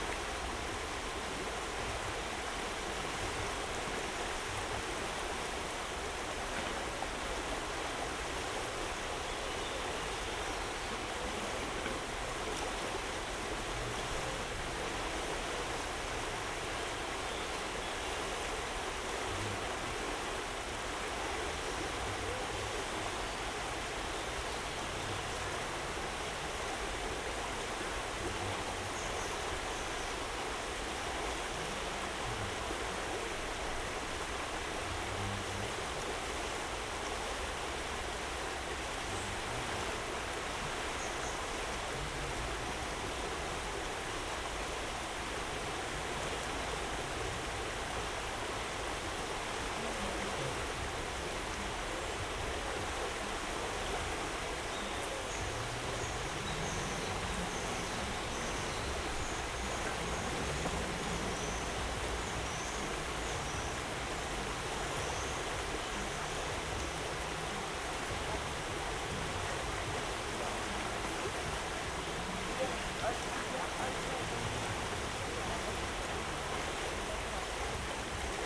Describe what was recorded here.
Bernardinai garden, standing at river...